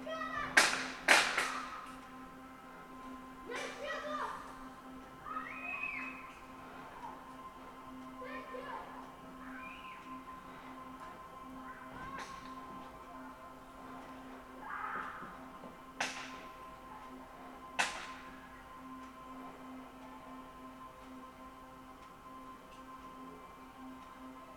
recorded through the kitchen window. children play war outside and Phill Niblock CD is playing in my room. drone and toy guns